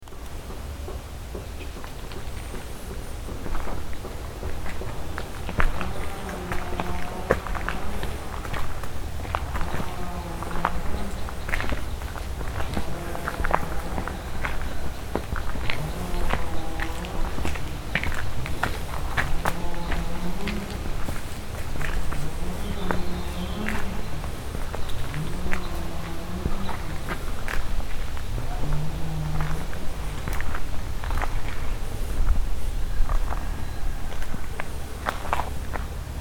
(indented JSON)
{
  "title": "ruin of german ammunition factory in Ludwikowice Klodzkie, Poland",
  "date": "2009-10-17 11:15:00",
  "description": "walking outside the bunkers",
  "latitude": "50.63",
  "longitude": "16.49",
  "altitude": "526",
  "timezone": "Europe/Berlin"
}